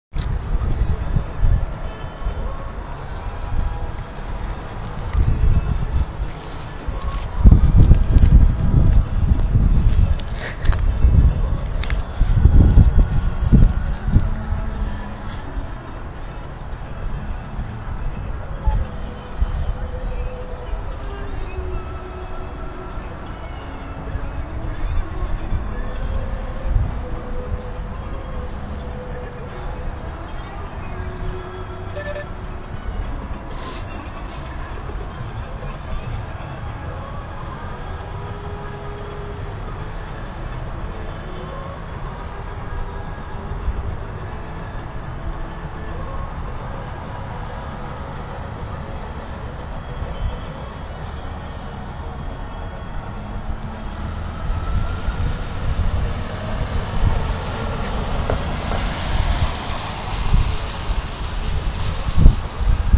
ice skater music clinton square
clinton square, ice skating, street sounds